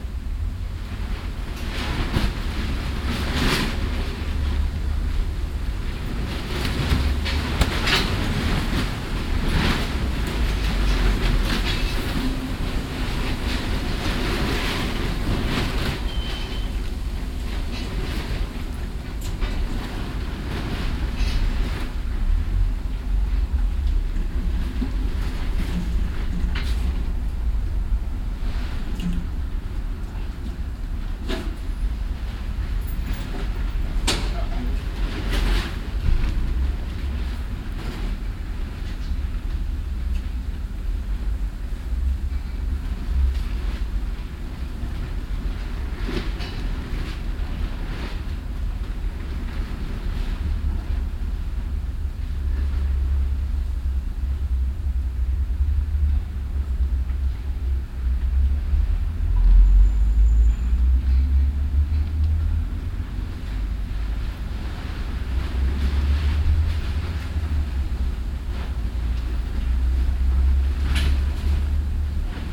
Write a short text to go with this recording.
bauplane an renovierter häuserfassade im wind, nachmittags, soundmap nrw: social ambiences, topographic field recordings